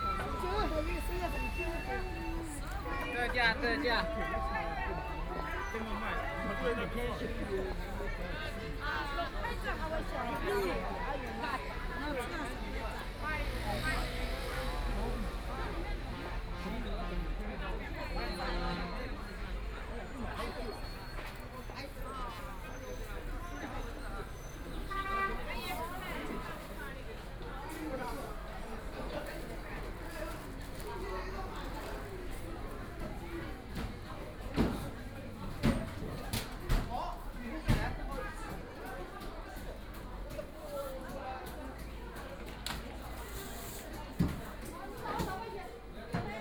Ren Min Rd.Shanghai - walking in the Street
Roadside Market, Indoor market, Binaural recordings, Zoom H6+ Soundman OKM II